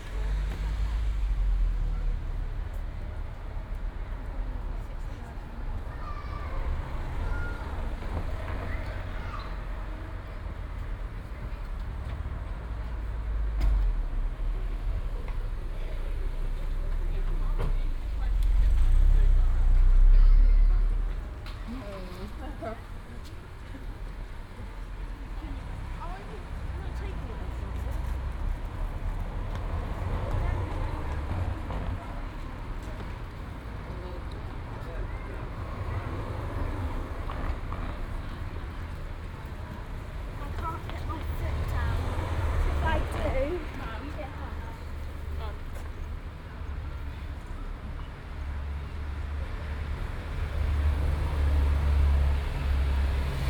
Standing on the corner of Queen Street and Albany Street
Devon, UK